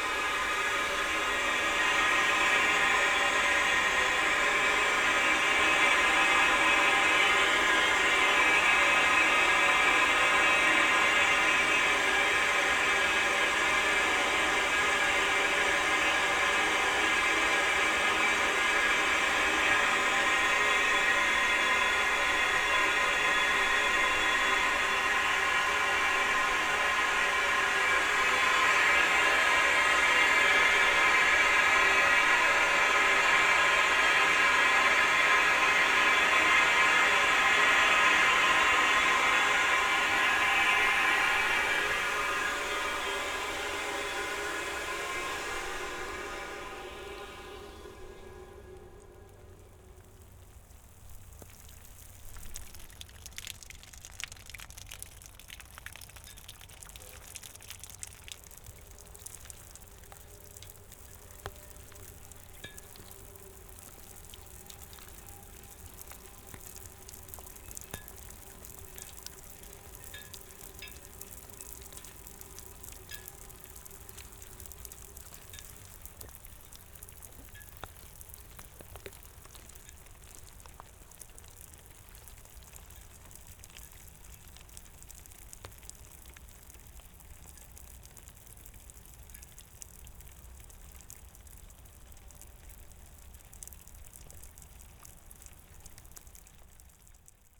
periodic watering of the surface, near village Pesch, at the edge of Garzweiler II coal mining, probably to prevent dust or to compact the soil befor excavating.
(tech: SD702, Audio Technica BP4025)
Erkelenz, Germany